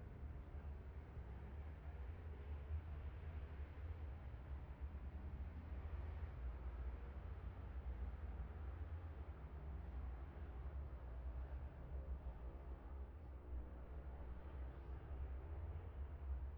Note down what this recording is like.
bob smith spring cup ... twins group A practice ... luhd pm-01 mics to zoom h5 ...